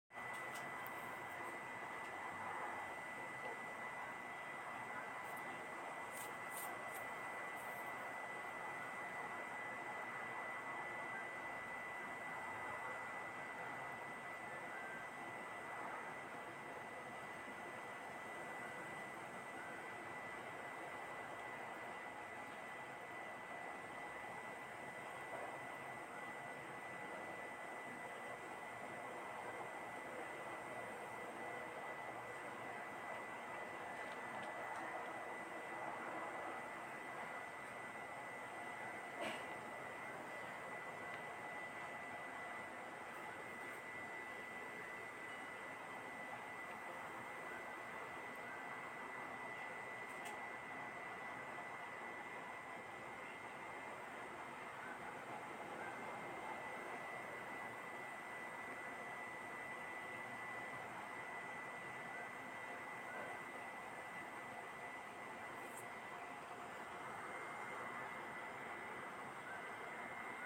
224台灣新北市瑞芳區大埔路錢龍新城 - Silence community
place:
Where I live with my wife, people here is nice; the main street 大埔路(Da-pu Rd.) have most stores which provide our living, includes post office and 7-11.
But the site I take this recording, which is my rented house, doesn't have any stores in the community, and very, very quiet, that you can heard it from the recording I take.
recording:
Almost soundless, you can say, for myself, I can heard a weaken bird's call at the mountain side in the background when I taking this recording.
situation:
A carless night, and none is outside, everybody nearby is staying home doing their business, which is TV watching, or net-surfing through the smart phone.
臺灣, September 2022